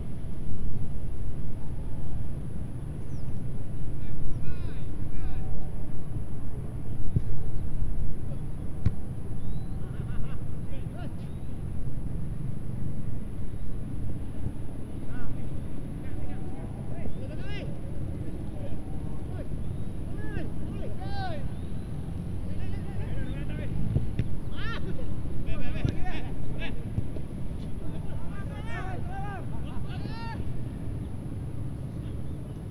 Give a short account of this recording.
It was a breezy Sunday and decided to watch a daily football match set by the players who live nearby. The house is just around and decided to just walk to the field. Also wanted to test the DIY windshield made out from socks. Not suitable for outdoor recordings but the football match is enjoyable to watch.